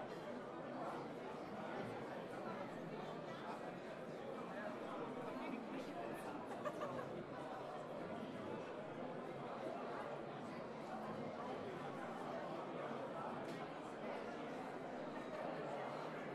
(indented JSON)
{
  "title": "Brewery Gaffel Cologne",
  "date": "2010-04-01 19:15:00",
  "description": "\"Feierabend\" Leiure-time at a brewery in Cologne.",
  "latitude": "50.94",
  "longitude": "6.96",
  "altitude": "59",
  "timezone": "Europe/Berlin"
}